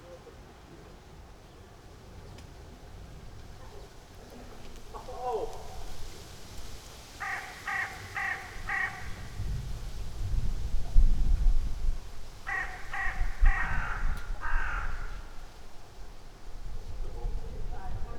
from/behind window, Mladinska, Maribor, Slovenia - september sounds
crows and others ...